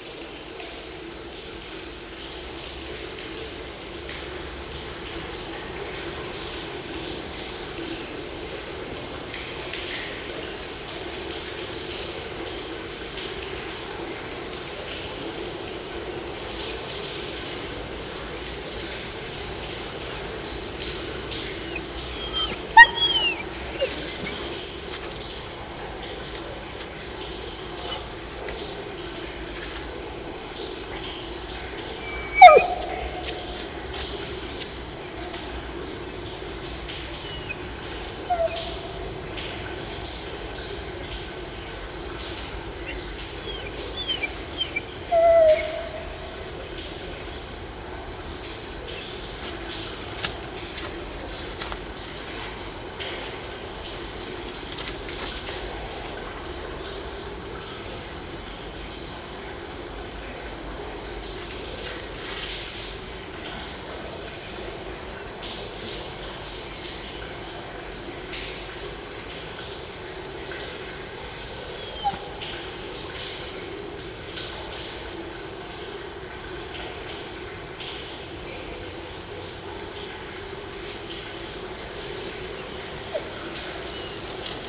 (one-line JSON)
{"title": "Vodopády v zahradě Kinských, Praha, Czechia - Vodárna Kinských", "date": "2022-02-04 17:09:00", "description": "Zvuk oknem vodárenské stavby, která je součástí petřínských pramenů.", "latitude": "50.08", "longitude": "14.40", "altitude": "249", "timezone": "Europe/Prague"}